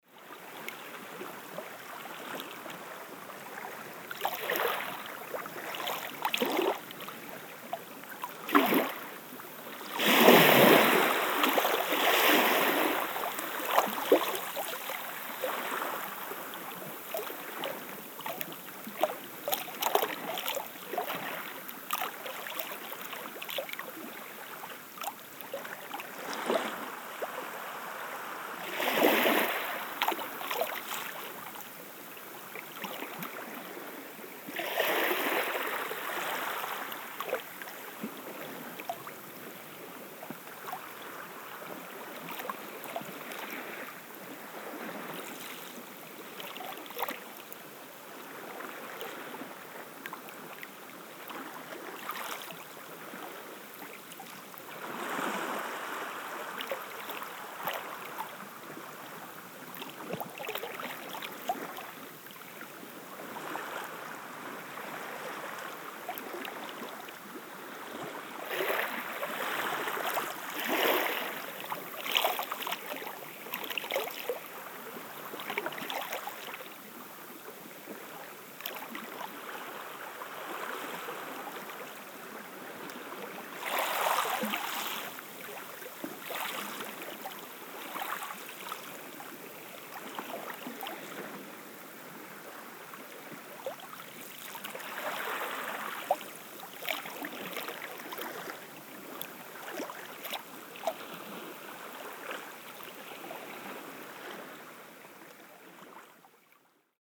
Lapping waves of the sea, Russia, The White Sea. - Lapping waves of the sea
Lapping waves of the sea.
Легкий плеск волн.